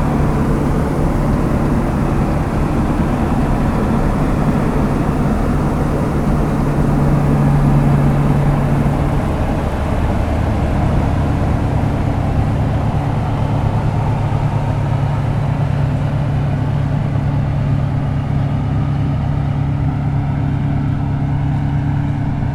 Walhain, Belgique - Combine harvester
A combine harvester in the fields, harvesting the wheat. This is the day, there's machines in the fields everywhere.
Walhain, Belgium, 15 August